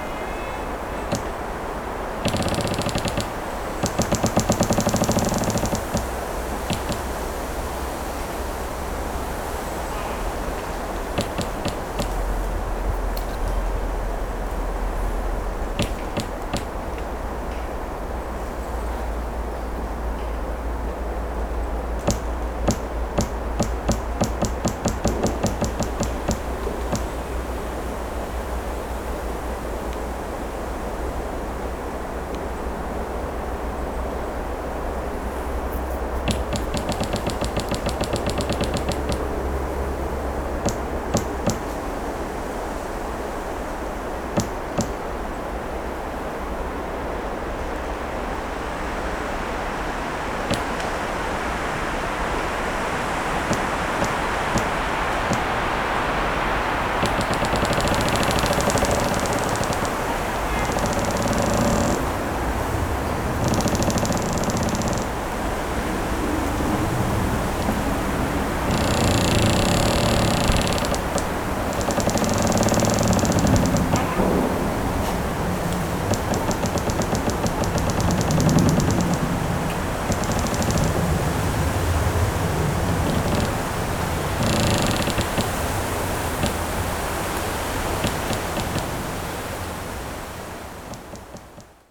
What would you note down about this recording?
recorder touching the trunk of a tree so the vibrations of the tree get picked up as well. the tree is pressing against another tree and you can hear the rubbing of branches and the patting of the trunk (roland r-07)